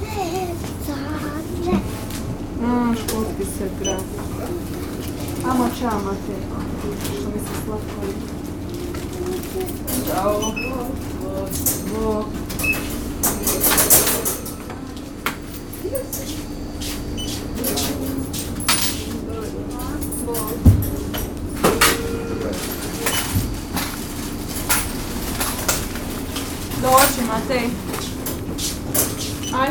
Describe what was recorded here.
A smalll supermarket at the harbour with everything for the daily life. People meet and talk to each other, the beep of the scanner at the cash-desk, an employee counting coins